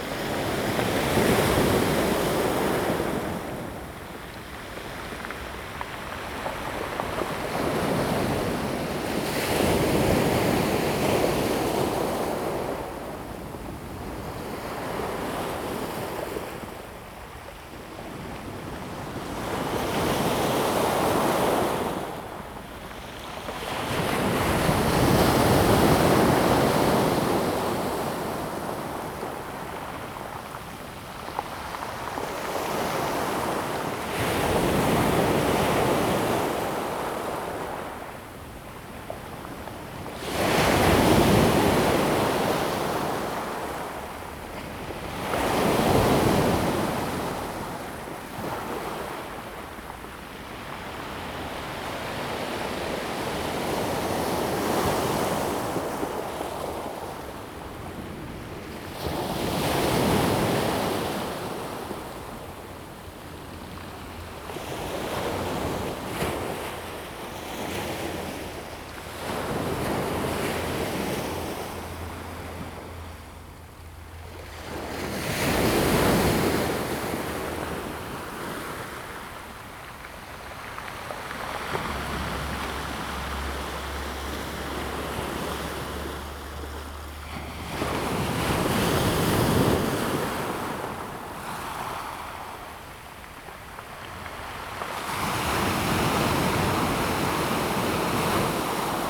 sound of the waves
Zoom H2n MS+XY +Sptial Audio